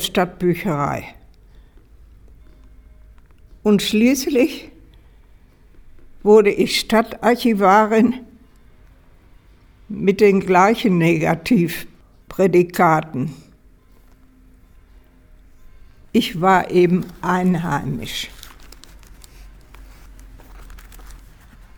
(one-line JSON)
{"title": "Sitzungssaal Amtshaus Pelkum, Hamm, Germany - Ilsemarie von Scheven reads her bio", "date": "2014-11-04 12:00:00", "description": "Ilsemarie von Scheven (06.12.1921 – 16.02.2019) liest Stationen ihres Werdegangs.", "latitude": "51.64", "longitude": "7.75", "altitude": "63", "timezone": "Europe/Berlin"}